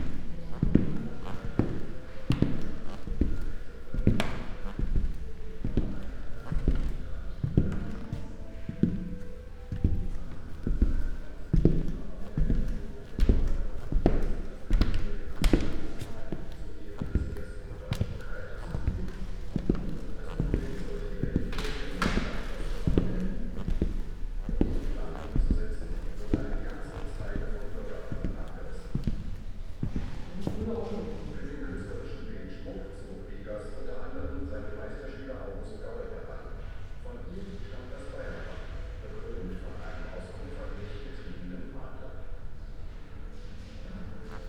Köllnischer Park, Märkisches Museum, Berlin - walking, Berlin 1945/46 on the walls ...
Sonopoetic paths Berlin